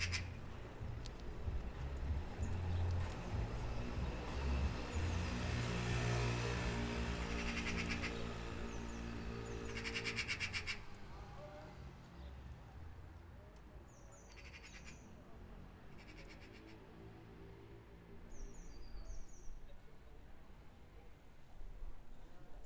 Groenewegje, Den Haag, Netherlands - Magpies building a nest.
Recording made form my window during the lockdown.
Two magpies are busy building a nest on tree just in front of my house.
During the pandemic seems that birds sing even louder.
The suspension of human activities cause sonic peculiarities in urban soundscape; the relationship between bio-phony and anthropo-phony seems more balanced.
Zuid-Holland, Nederland, 23 January 2021